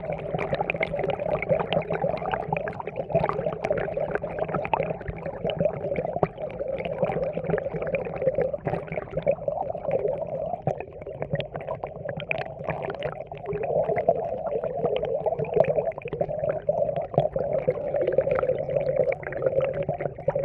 Output of the Hayeffes pond, with water flowing in a hole. Recording made with a DIY underwater equipment.
Mont-Saint-Guibert, Belgium, 7 April 2016, 18:30